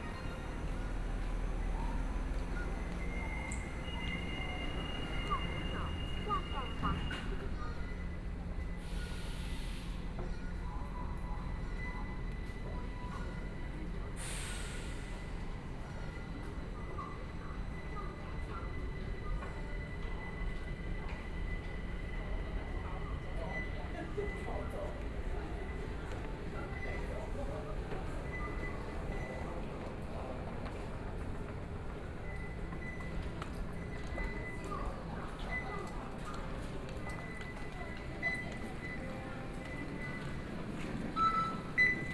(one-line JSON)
{"title": "Zuoying Station, Kaohsiung City - Walking through the station", "date": "2014-05-21 19:35:00", "description": "Walking in the station hall, Kaohsiung Mass Rapid Transit\nSony PCM D50+ Soundman OKM II", "latitude": "22.69", "longitude": "120.31", "altitude": "12", "timezone": "Asia/Taipei"}